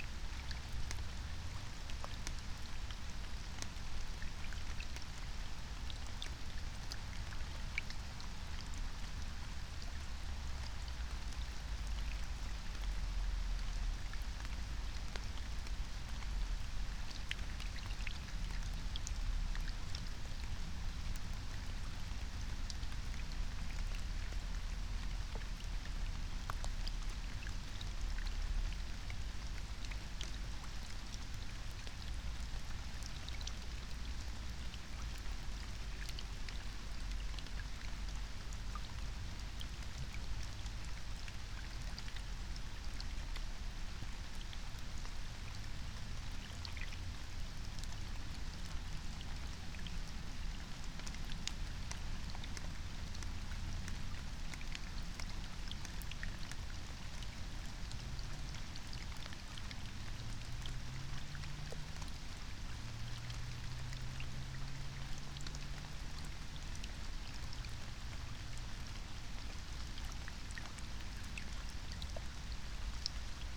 Berlin, Alt-Friedrichsfelde, Dreiecksee - train junction, pond ambience
22:33 Berlin, ALt-Friedrichsfelde, Dreiecksee - train triangle, pond ambience
Deutschland, 29 August 2021, 22:33